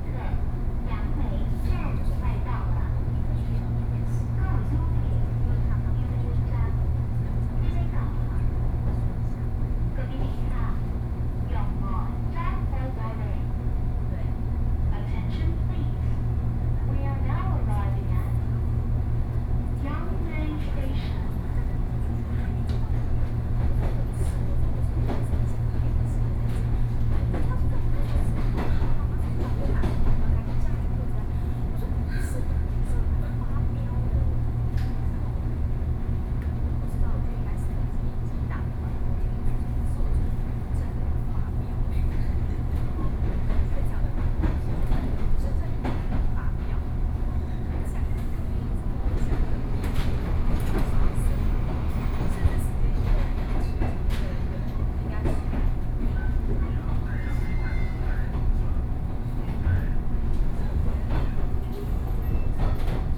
Yangmei - TRA
from Puxin Station to Yangmei Station, Sony PCM D50+ Soundman OKM II